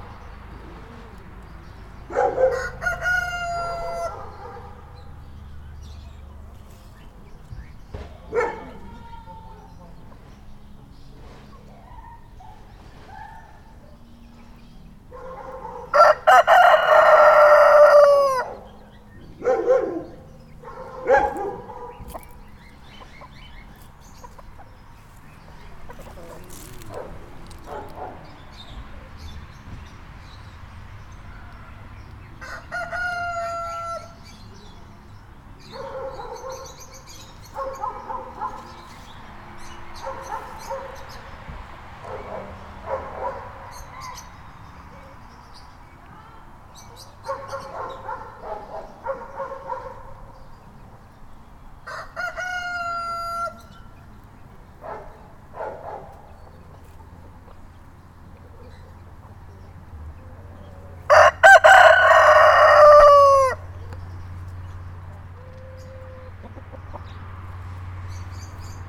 Osek u Teplic, Česká republika - nadražní zuková krajina
domy u dolního nádraží s drůbeží